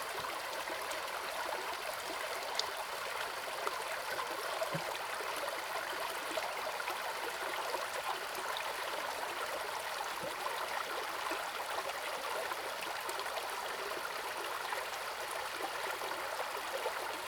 Zhonggua River, 埔里鎮成功里 - the stream
Cicadas cries, In the stream
Zoom H2n MS+XY
Nantou County, Taiwan, 15 September 2016